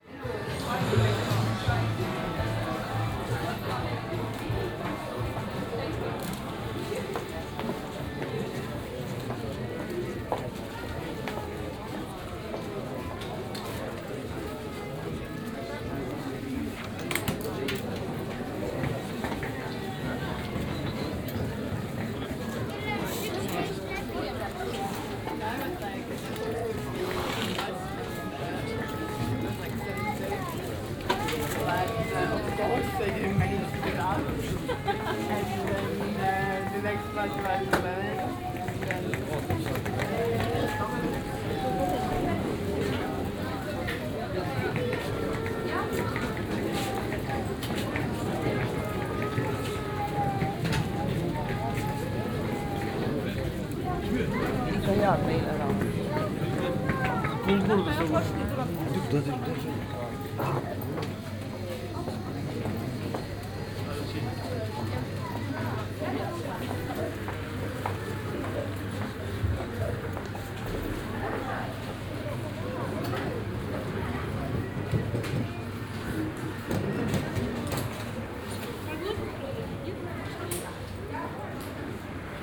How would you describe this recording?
easter weekend, busy bus terminal, long distance busses start here